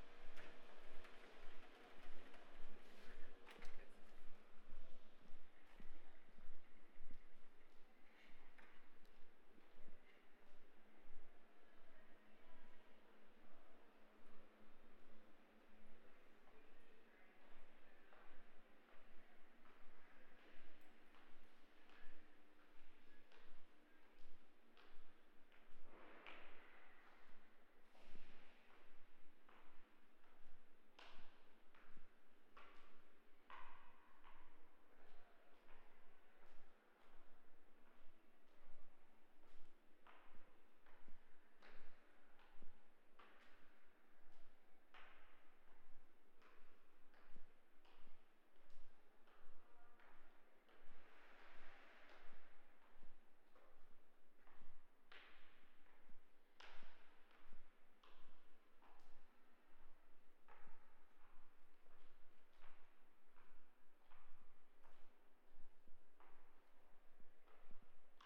A recording made while learning about using a stereo shotgun microphone. I climbed up 4 flights of stairs in the Glass tank and took the lift back down. liked the echo in the stairwell and the sense of volume of the spaces.
United Kingdom, European Union, 11 July 2013, 11:00